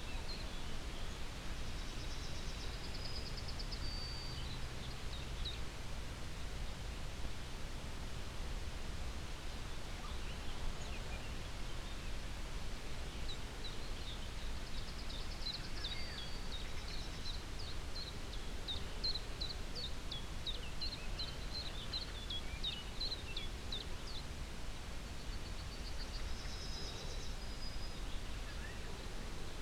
Aukštadvario seniūnija, Litauen - Lithuania, farm house, terrace stairways, morning time
Sitting at the terrace stairways of the main house in the morning time. The sounds of the morning birds, a mellow wind crossing the downhill fields, a deer crossing the fields in the distance, a nearly inaudible plane crossing the sky.
international sound ambiences - topographic field recordings and social ambiences
2 July, 06:30